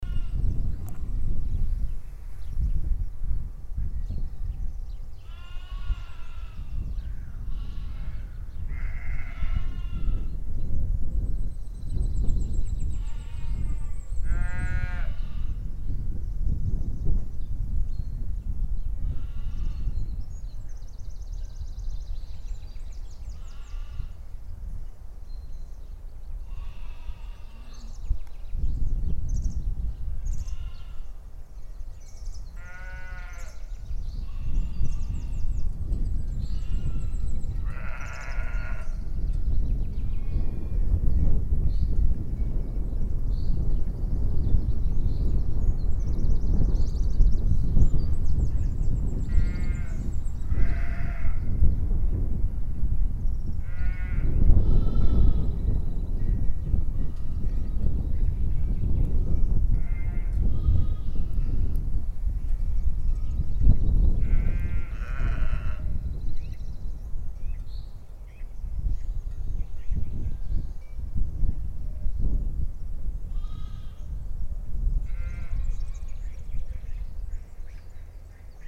Parco del monte Pellegrino Palermo (ROMANSOUND)
pecorella e montone al pascolo (edirol r-09hr)